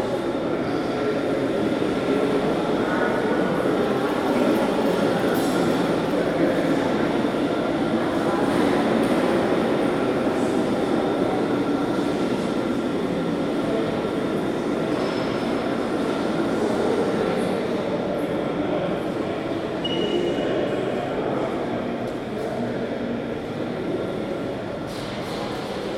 Tate Britain - Stairs Featuring David Tremlett
Recorded halfway up the stairs that feature the David Tremlett artwork. At about 01:45 there is the sound of a helicopter flying overhead. The louder noise towards the end is the sound of a refreshments trolly being wheeled past the entrance to the Queer British Art 1861 - 1967 exhibition. Recorded on a Zoom H2n.